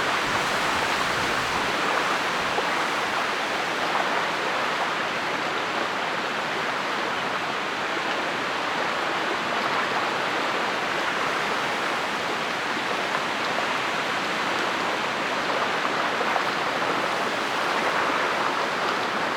{"title": "Ptasi Raj, Gdańsk, Poland - Grobla trzciny", "date": "2015-06-07 10:55:00", "latitude": "54.36", "longitude": "18.79", "timezone": "Europe/Warsaw"}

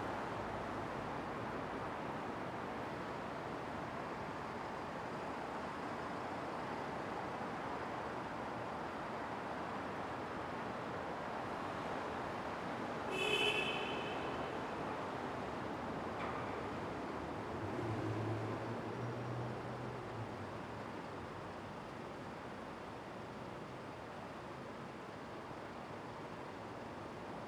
{"title": "대한민국 서울특별시 서초구 우면동 산69-5 - Umyun-dong, Sun-am Bridge", "date": "2019-10-04 22:39:00", "description": "Umyun-dong, Sun-am Bridge\n우면동 선암교 밑", "latitude": "37.46", "longitude": "127.02", "altitude": "39", "timezone": "Asia/Seoul"}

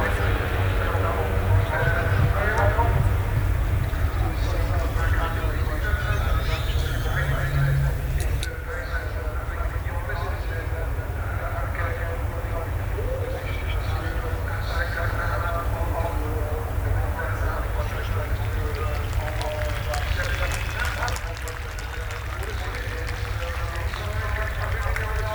{"title": "Lillingstone Dayrell with Luffield Abbey, UK - british motorcycle garnd prix 2013 ...", "date": "2013-09-01 14:44:00", "description": "moto3 race 2013 ... warm up lap and first few race laps ... lavalier mics ...", "latitude": "52.07", "longitude": "-1.02", "timezone": "Europe/London"}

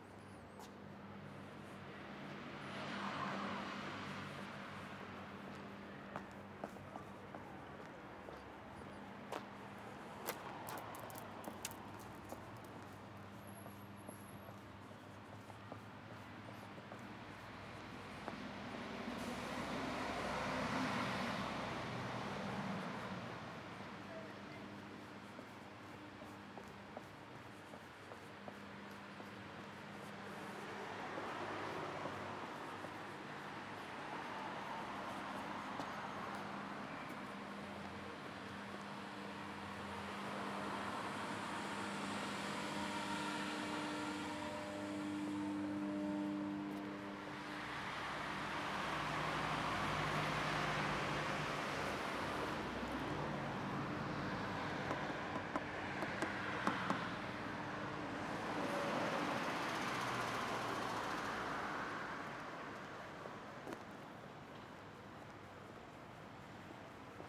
{"title": "zamet, centar, rukomet", "description": "walking around new sport center", "latitude": "45.34", "longitude": "14.38", "altitude": "108", "timezone": "Europe/Berlin"}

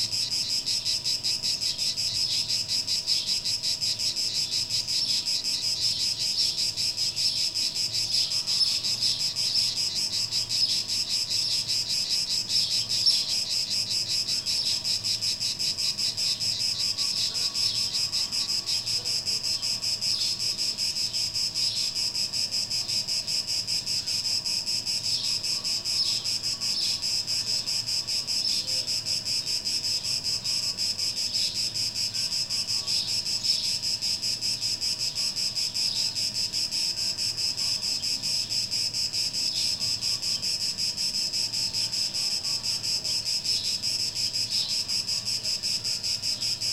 {"title": "Dadia, Greece, cicadas and sparrows", "date": "2004-06-29 17:10:00", "latitude": "41.12", "longitude": "26.23", "altitude": "98", "timezone": "Europe/Athens"}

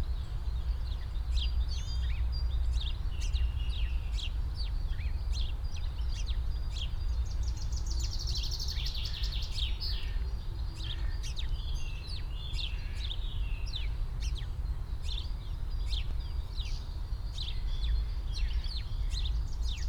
{"title": "Friedhof Columbiadamm, Berlin, Deutschland - cemetery, spring ambience", "date": "2019-03-23 10:20:00", "description": "Friedhof Columbiadamm (ehem. Garnisonsfriedhof), cemetery, weekend morning in early spring ambience\n(SD702, DPA4060)", "latitude": "52.48", "longitude": "13.41", "altitude": "49", "timezone": "Europe/Berlin"}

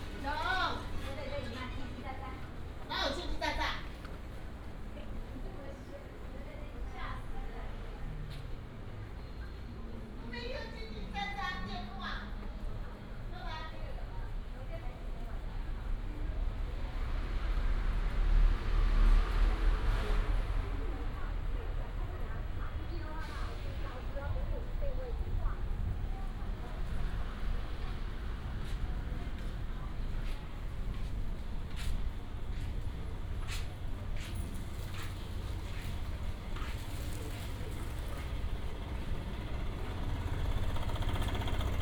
{"title": "南機場舊市場, Wanhua Dist., Taipei City - Old market and community", "date": "2017-04-28 16:52:00", "description": "Walking in the Old market and community, traffic sound", "latitude": "25.03", "longitude": "121.50", "altitude": "11", "timezone": "Asia/Taipei"}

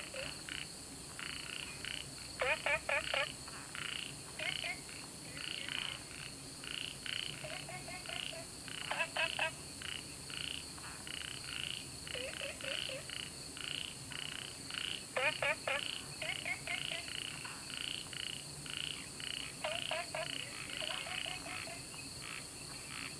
{"title": "桃米巷, 南投縣埔里鎮桃米里 - Frogs chirping", "date": "2015-08-10 20:41:00", "description": "Sound of insects, Frogs chirping\nZoom H2n MS+XY", "latitude": "23.94", "longitude": "120.94", "altitude": "495", "timezone": "Asia/Taipei"}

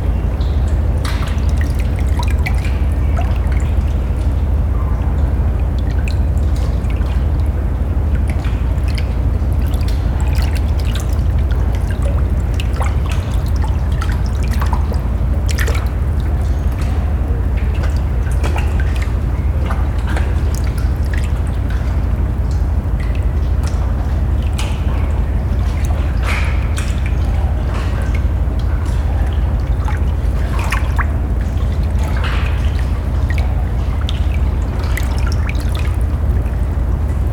{"title": "Gamle Oslo, Norway - On the pier, close to the opera. The Underworld Special.", "date": "2011-08-30 13:30:00", "description": "Walking on the pier close to the Oslo opera recording. The ferry going to Denmark on the other side of the harbour. Recording in a water dripping cave underneath the pier.\nRecorded with a Zoom H4n.", "latitude": "59.90", "longitude": "10.75", "altitude": "3", "timezone": "Europe/Oslo"}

